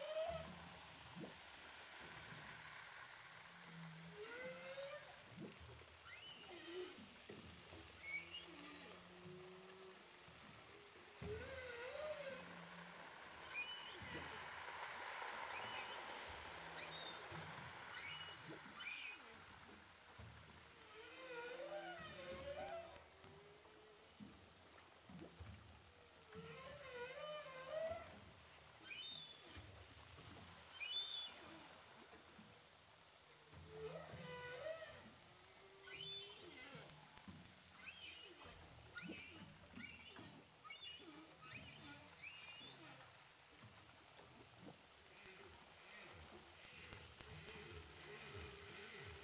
Baleines à bosse enregistrées à l'hydrophone DPA au large de saint Paul de la réunion
4 August 2010, ~20:00